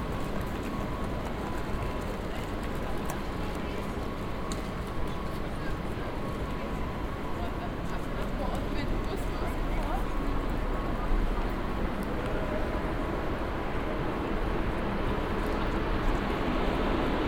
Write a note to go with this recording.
announcements, steps and rolling suitcases in dresden main station, while trains drive in and out, soundmap d: social ambiences/ listen to the people - in & outdoor nearfield recordings